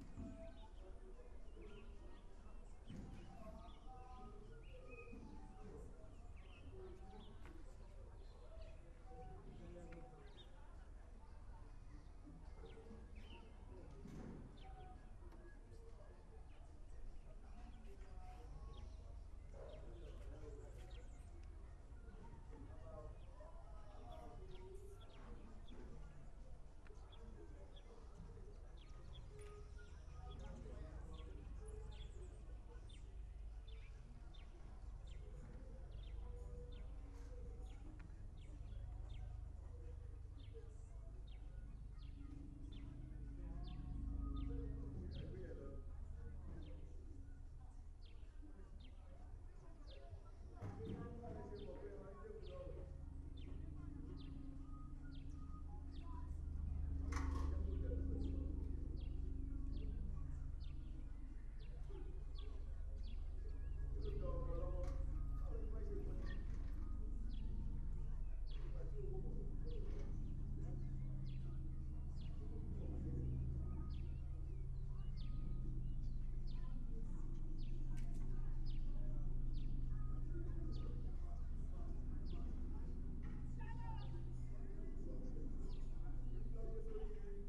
Badore, Lagos
world listening day, birds, truck, frogs, church, humans, plane